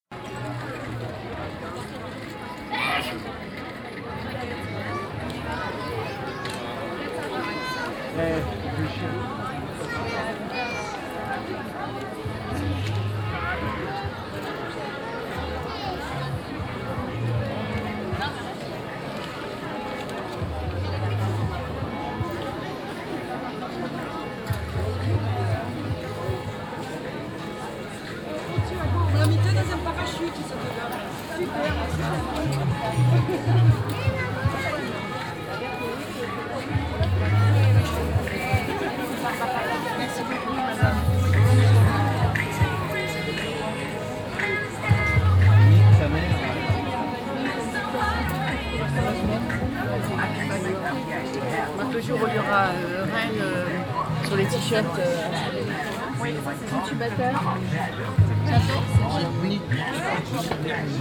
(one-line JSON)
{"title": "saint remy de provence, market and bells", "date": "2011-08-26 16:43:00", "description": "On a small square during the weekly market of the village. The sound of church bells and the dense crowded market atmosphere.\ninternational village scapes - topographic field recordings and social ambiences", "latitude": "43.79", "longitude": "4.83", "altitude": "61", "timezone": "Europe/Paris"}